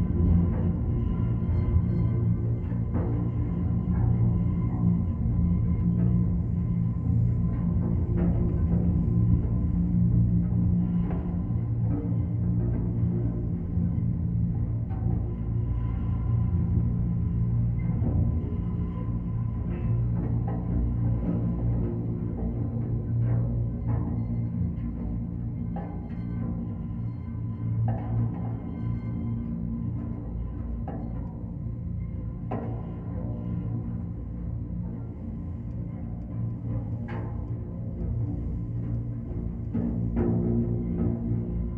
Parallel sonic worlds: Millennium Bridge deep drone, Thames Embankment, London, UK - Millennium Bridge wires singing in the wind
The sound of winds in the wires of the bridge picked up by a contact mic. The percussive sounds are the resonance of footsteps and rolling cases.